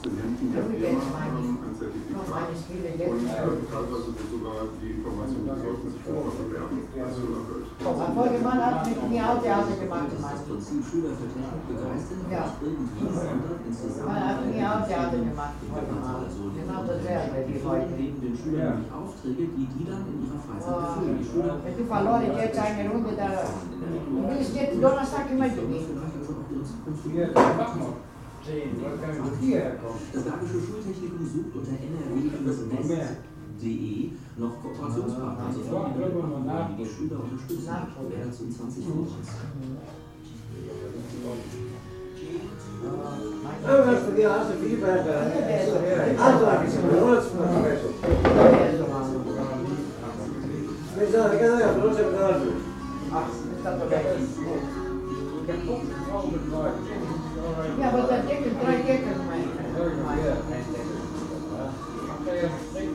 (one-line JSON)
{"title": "Oberbarmen, Wuppertal, Deutschland - adler-klause", "date": "2011-02-17 18:30:00", "description": "adler-klause, berliner str. 149, 42277 wuppertal", "latitude": "51.28", "longitude": "7.22", "altitude": "163", "timezone": "Europe/Berlin"}